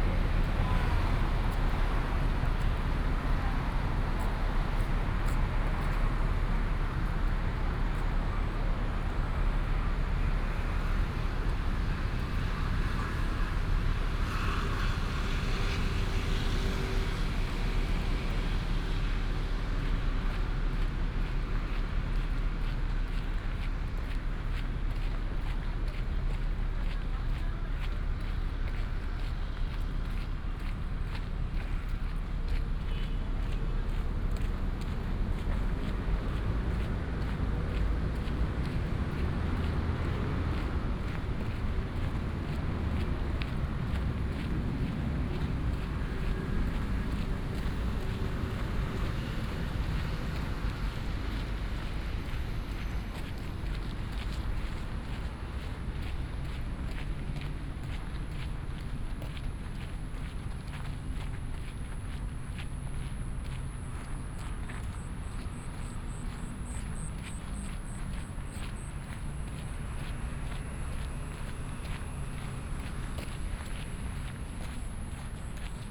walking in the Park, Footsteps, Traffic Sound
25 July 2015, ~20:00